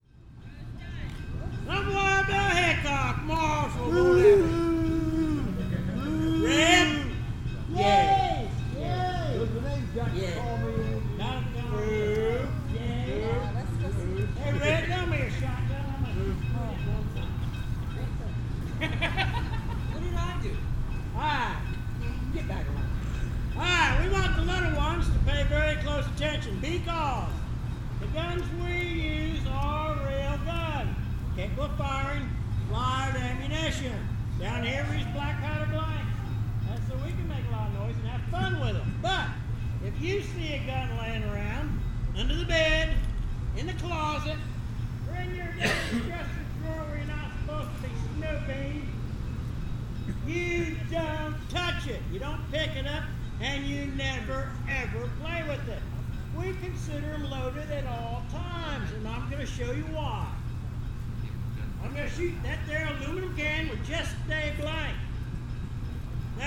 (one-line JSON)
{"title": "Old Abilene Town, near 215 SE 5th St, Abilene, KS, USA - Old Abilene Town Gunfight (Intro)", "date": "2017-08-27 16:02:00", "description": "*Caution: Loud sound at 1:27* Actors, portraying Wild Bill Hickok and others, begin their western gunfight show with a safety speech. An aluminum can is shot to demonstrate the danger posed by a blank cartridge. The diesel engine used by the Abilene & Smoky Valley Railroad maneuvers in the background. Stereo mics (Audiotalaia-Primo ECM 172), recorded via Olympus LS-10.", "latitude": "38.91", "longitude": "-97.21", "altitude": "348", "timezone": "America/Chicago"}